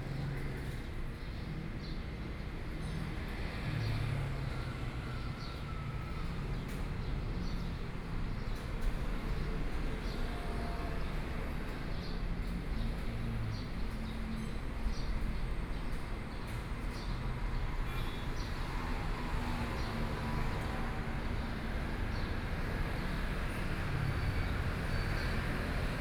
{"title": "Sec., Zhongshan Rd., 礁溪鄉大義村 - Town", "date": "2014-07-22 08:44:00", "description": "Traffic Sound, In the morning\nZoom H6 XY mic+ Rode NT4", "latitude": "24.82", "longitude": "121.77", "altitude": "16", "timezone": "Asia/Taipei"}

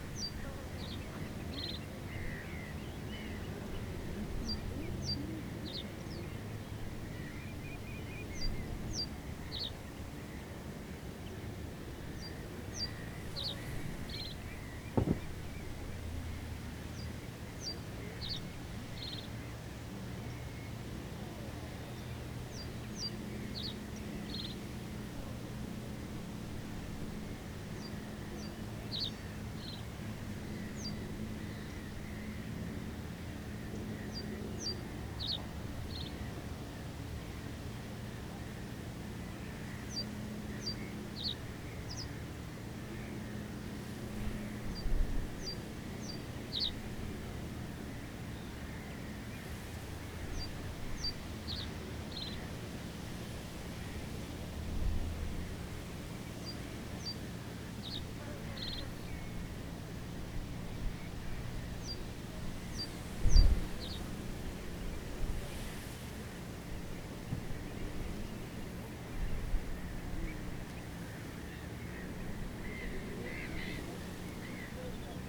{"title": "workum: bird sanctuary - the city, the country & me: wind-blown reed", "date": "2013-06-25 16:03:00", "description": "reed bends in the wind, singing and crying birds\nthe city, the country & me: june 25, 2013", "latitude": "52.97", "longitude": "5.41", "timezone": "Europe/Amsterdam"}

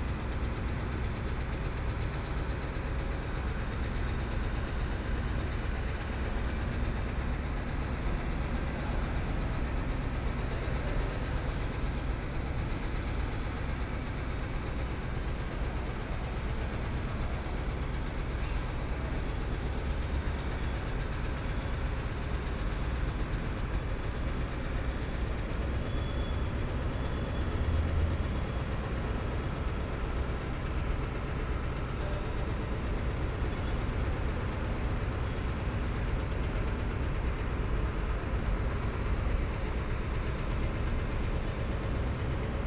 {"title": "Zhongxiao W. Rd., Zhongzheng Dist. - Construction noise", "date": "2014-01-21 14:13:00", "description": "Construction noise, In the lobby of the building, Binaural recordings, Zoom H4n+ Soundman OKM II", "latitude": "25.05", "longitude": "121.52", "timezone": "Asia/Taipei"}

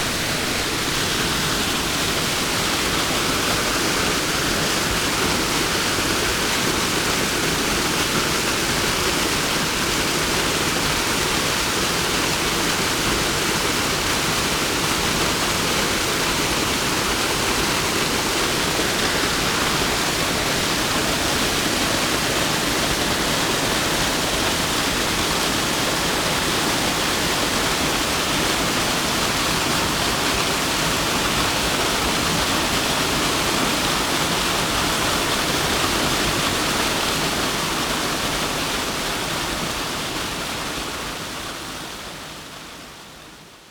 {"title": "waterfall Skalce, Pohorje - from above", "date": "2014-12-21 15:11:00", "latitude": "46.50", "longitude": "15.55", "altitude": "957", "timezone": "Europe/Ljubljana"}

